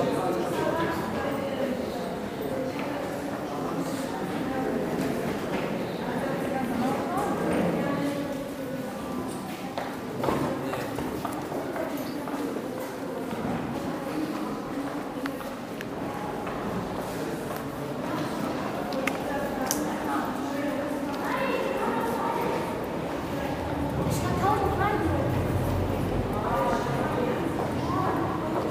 berlin, underground station charlottenburg
recorded nov 14th, 2008.
Berlin, Germany, 29 April 2009